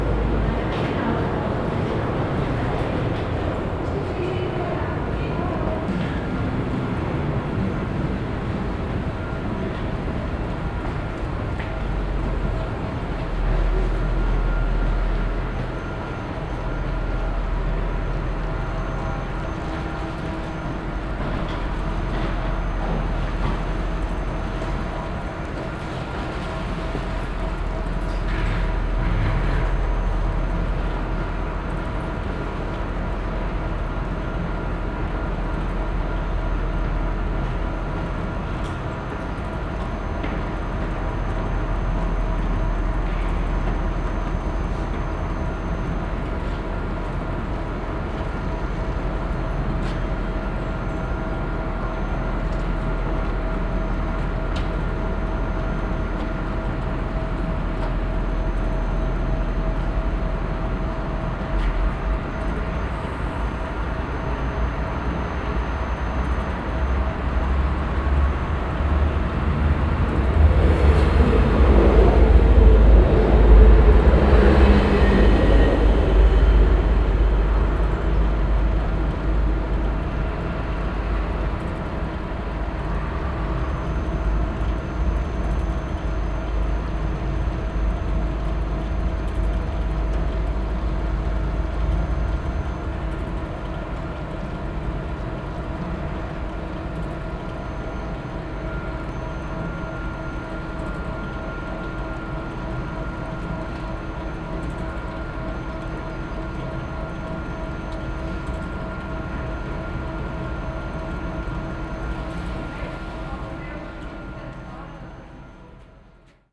cologne, deutz, station, conveyer belt
sound of a conveyer belt parallel to the stairs that lead to the rail tracks
soundmap d - social ambiences and topographic field recordings